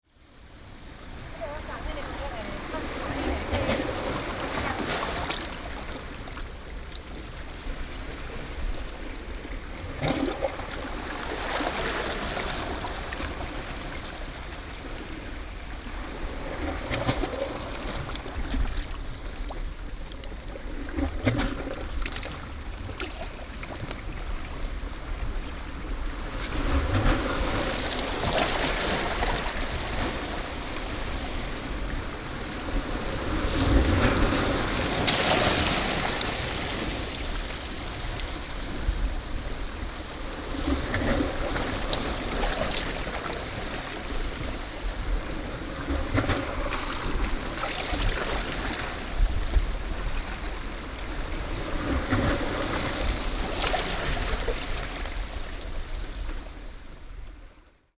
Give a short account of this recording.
Ocean waves crashing sound, Sony PCM D50